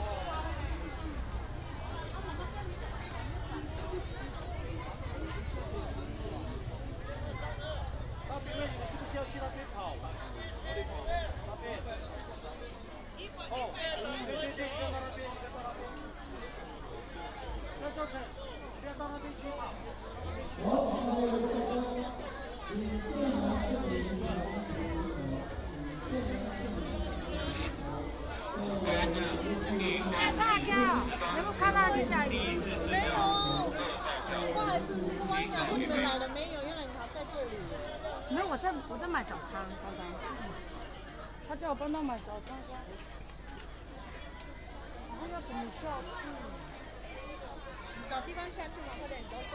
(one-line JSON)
{"title": "金峰鄉介達國小, Taitung County - sports competition", "date": "2018-04-04 08:52:00", "description": "School and community residents sports competition", "latitude": "22.60", "longitude": "121.00", "altitude": "50", "timezone": "Asia/Taipei"}